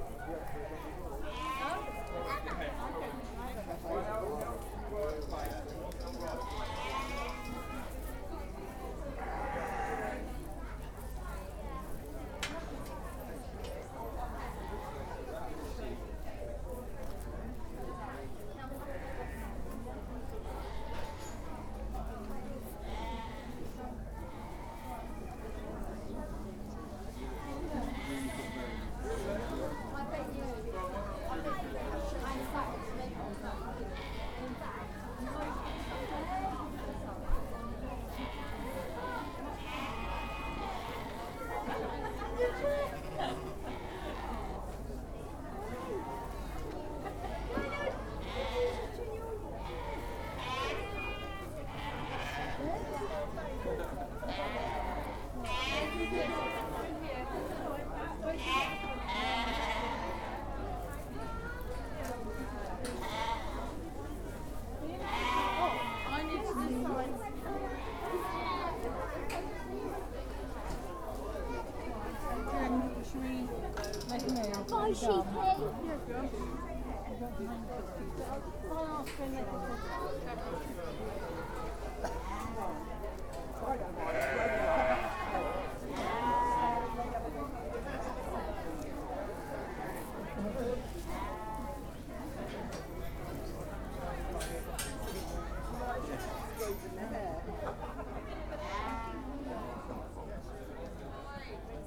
Broad Oak, Heathfield, UK - Heathfield Show Sheep Tent
The Heathfield Show is held in this field at Tottingworth Farm, Broad Oak every year. This recording is in the Sheep Tent where sheep belonging to local sheep breeders and farmers are judged. Hand held Tascam DR-05 with wind muff.
27 May 2017, 13:15